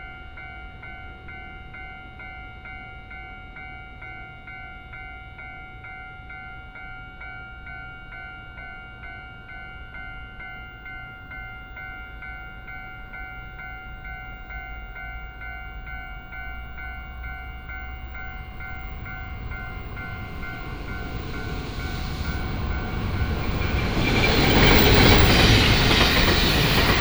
舊後汶公路118-2號, Houlong Township - near the railway crossing
In the vicinity of the railway crossing, The train passes by, Binaural recordings, Sony PCM D100+ Soundman OKM II